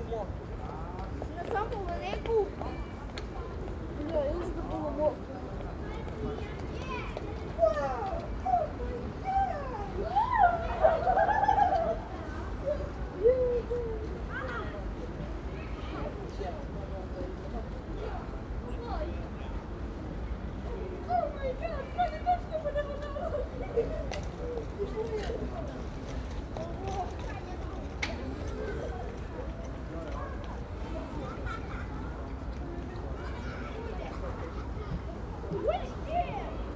Children's adventure playground, Vltavanů, Praha, Czechia - Children's adventure playground
This playground has some rather high rope walkways leading to steep slides back to the ground, so sitting nearby the sounds of children exploring the possibilities regularly come from above your head. It was a cold and stormy day so not many were here, but they were obviously enjoying it. The right mix of excitement and scariness.
Praha, Česko, 9 April 2022, 13:56